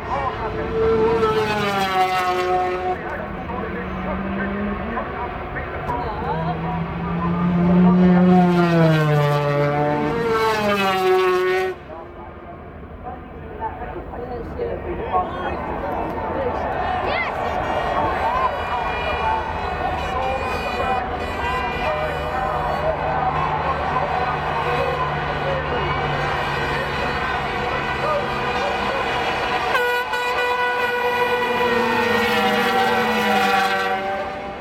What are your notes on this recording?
500cc motorcycle race ... part two ... Starkeys ... Donington Park ... the race and associated noise ... Sony ECM 959 one point stereo mic to Sony Minidisk ...